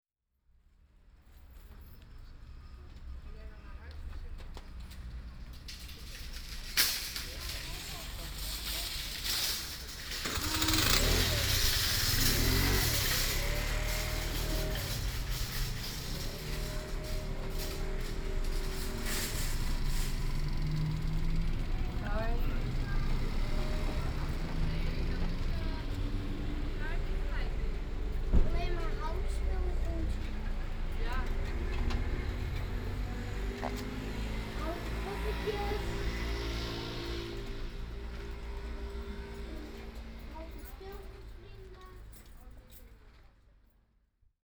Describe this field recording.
winkelkarretjes, winkelend publiek, shopping people with shopping cadle